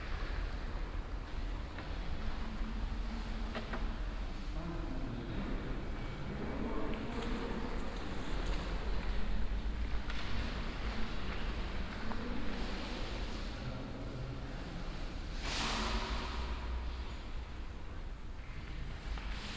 vaison la romaine, roman church
Inside the silent atmosphere of the historical church Notre-Dame de Nazareth de Vaison-la-Romaine.
international village scapes - topographic field recordings and social ambiences